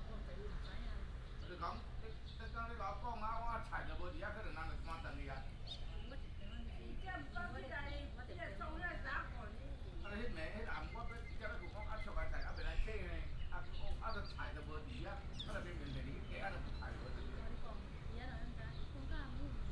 {
  "title": "枋山鄉枋山路, Fangshan Township - Small village",
  "date": "2018-04-24 10:40:00",
  "description": "Small village, traffic sound, birds sound",
  "latitude": "22.26",
  "longitude": "120.65",
  "altitude": "7",
  "timezone": "Asia/Taipei"
}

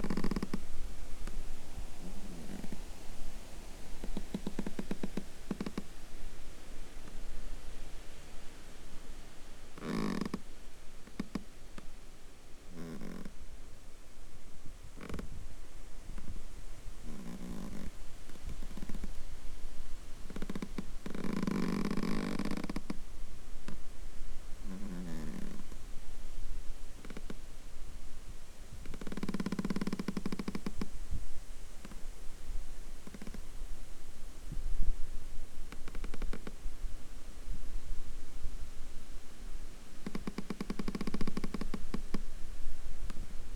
{
  "title": "Assesse, Belgique - sorbier craque près d'Yvoir",
  "date": "2015-08-24 15:00:00",
  "description": "wind blows and make an old sorbier long young branch crack on his old trunk",
  "latitude": "50.34",
  "longitude": "4.93",
  "altitude": "268",
  "timezone": "Europe/Brussels"
}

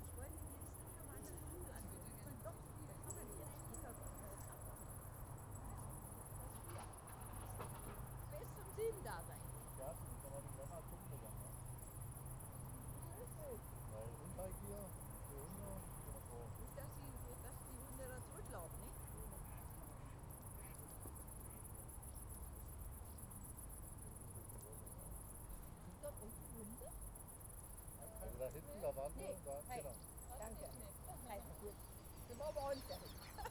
{
  "title": "Berlin Wall of Sound. Machnower Busch 080909",
  "latitude": "52.42",
  "longitude": "13.24",
  "altitude": "38",
  "timezone": "Europe/Berlin"
}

